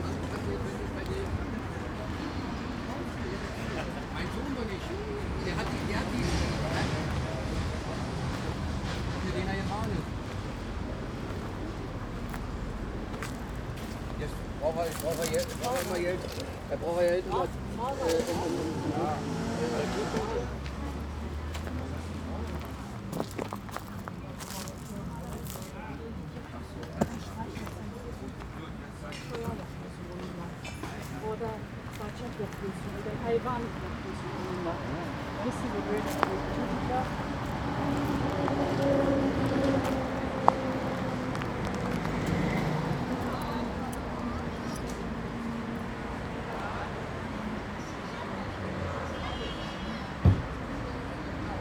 Community day in front of the church. (Evangel. Kirchengemeinde Neu-Tempelhof)